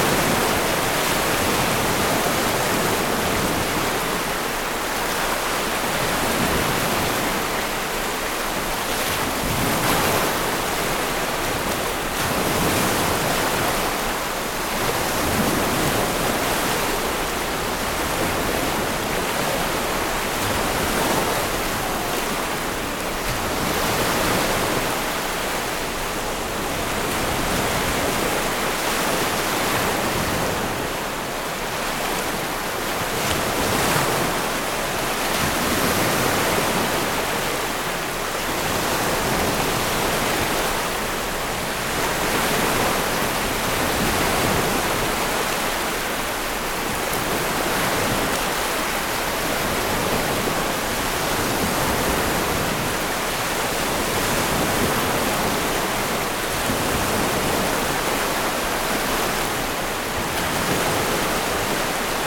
{
  "title": "Leamington, ON, Canada - Point Pelee",
  "date": "2022-05-21 13:34:00",
  "description": "Recorded at the southernmost tip of mainland Canada. Because of currents in the area, waves approach from both sides, though moreso from the west (right).\nZoom H6 w/ MS stereo mic head.",
  "latitude": "41.91",
  "longitude": "-82.51",
  "altitude": "172",
  "timezone": "America/Toronto"
}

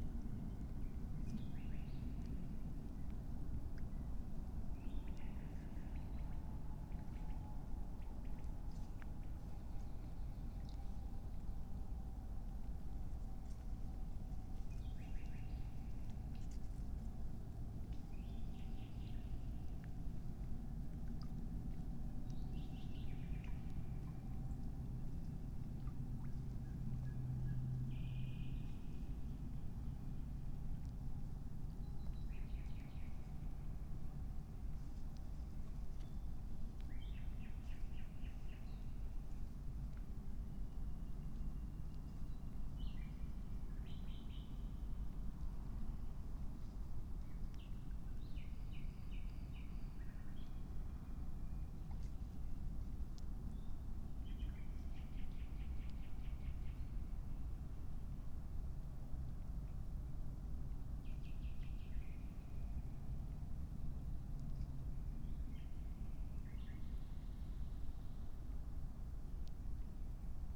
{
  "title": "Berlin, Buch, Mittelbruch / Torfstich - midnight ambience /w curious animal",
  "date": "2021-05-15",
  "description": "midnight at the pond, Berlin Buch, Torfstich 1 (peat cut), a curious animal has discovered the microphones and starts an investigation.\n(excerpt of a steam log made with remote microphone)",
  "latitude": "52.65",
  "longitude": "13.50",
  "altitude": "57",
  "timezone": "Europe/Berlin"
}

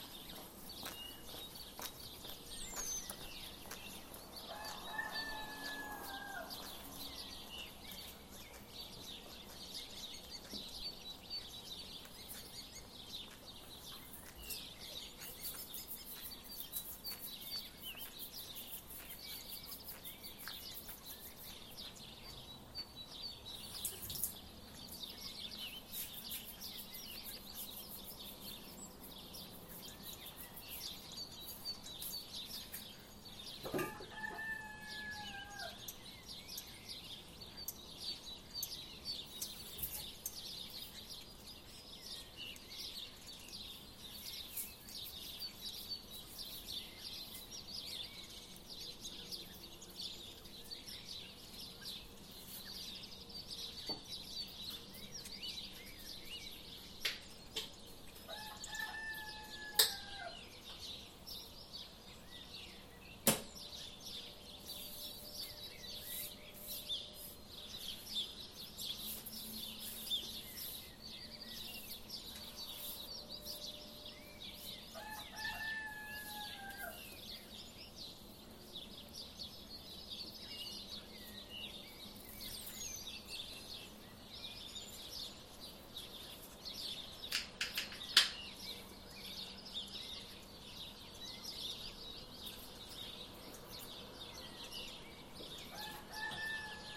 {
  "title": "Alois-Geißler-Straße, Köflach, Österreich - The noise in the flower meadow.",
  "date": "2020-04-28 05:34:00",
  "description": "The noise in the flower meadow.",
  "latitude": "47.04",
  "longitude": "15.08",
  "altitude": "468",
  "timezone": "Europe/Vienna"
}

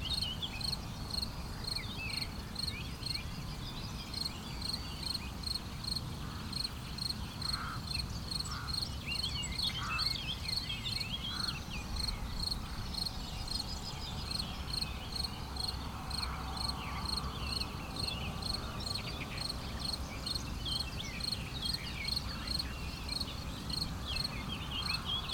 {
  "title": "Grozon, France - Into the fields",
  "date": "2017-06-15 07:10:00",
  "description": "Into the field early on the morning, sound of the wind, a few background noise of the road, and sometimes a discreet (so beautiful) Yellowhammer.",
  "latitude": "46.87",
  "longitude": "5.71",
  "altitude": "360",
  "timezone": "Europe/Paris"
}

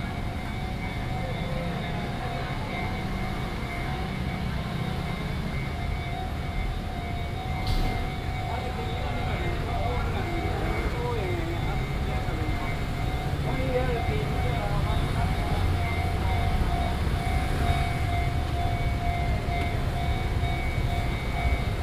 {
  "title": "Chenggong 1st Rd., Ren’ai Dist., 基隆市 - Walking on the road",
  "date": "2016-08-04 08:14:00",
  "description": "Traffic Sound, Walking through the market, Walking on the road",
  "latitude": "25.13",
  "longitude": "121.74",
  "altitude": "13",
  "timezone": "Asia/Taipei"
}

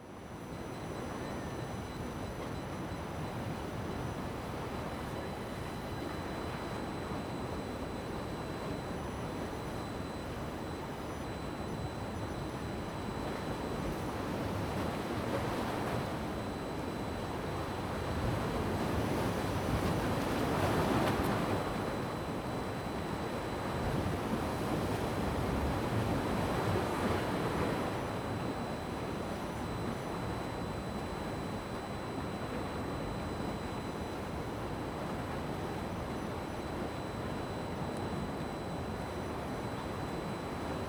{
  "title": "西子灣風景區, Gushan Dist., Kaohsiung City - On the bank",
  "date": "2016-11-22 14:11:00",
  "description": "Sound of the waves, On the bank\nZoom H2n MS+XY",
  "latitude": "22.62",
  "longitude": "120.26",
  "altitude": "1",
  "timezone": "Asia/Taipei"
}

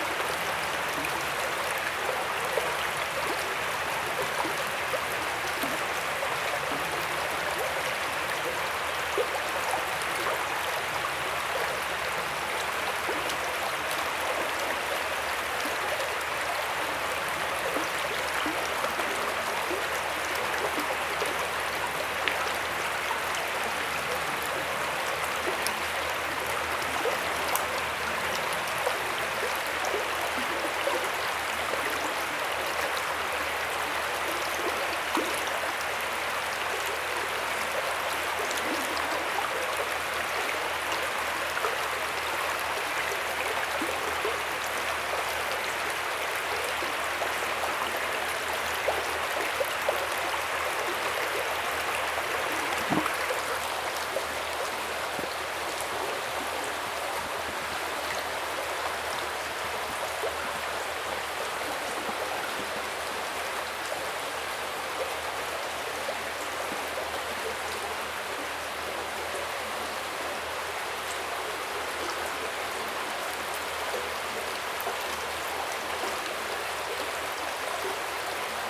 {
  "title": "loading... - Water stream at the Jerusalem Botanical Gardens",
  "date": "2018-04-30 12:58:00",
  "description": "Water stream at the Jerusalem Botanical Gardens",
  "latitude": "31.79",
  "longitude": "35.24",
  "altitude": "820",
  "timezone": "Asia/Jerusalem"
}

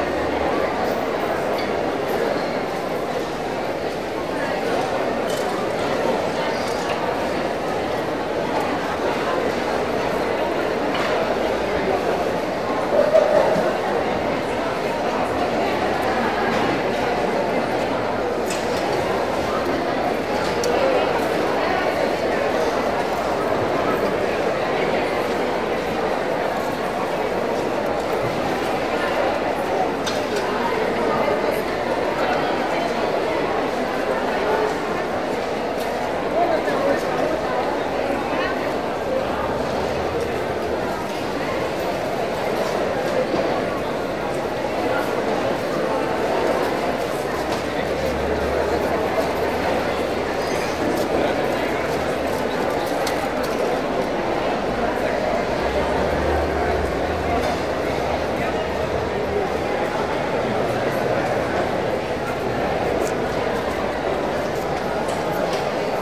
{
  "title": "budapest, markethall, indoor atmo",
  "description": "inside a large markethall, fruits and vegetables - steps and conversations in the morning time\ninternational city scapes and social ambiences",
  "latitude": "47.49",
  "longitude": "19.06",
  "altitude": "114",
  "timezone": "Europe/Berlin"
}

